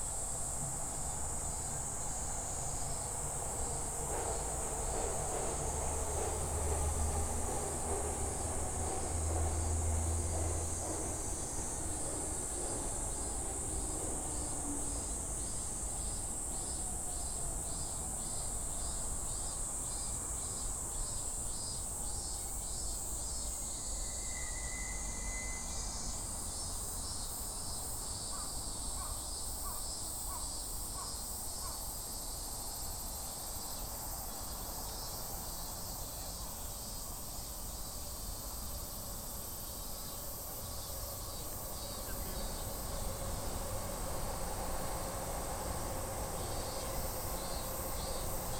Sendagaya, Shibuya-ku, Tōkyō-to, Япония - Shinjuku Gyoen National Garden
Walk along the French fleet
Shibuya-ku, Tōkyō-to, Japan, 28 July 2016